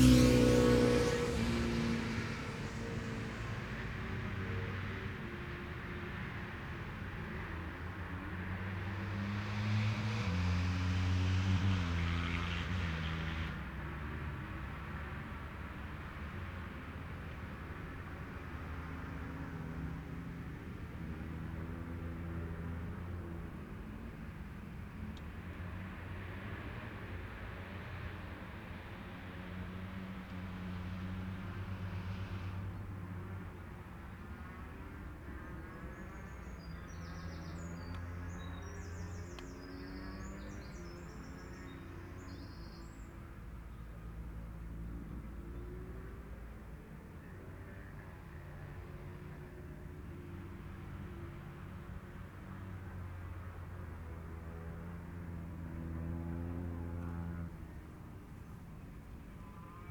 Cock o' the North Road Races ... Oliver's Mount ... ultra lightweight / lightweight motorbike qualifying ...
Scarborough, UK, 24 June 2017, ~11:00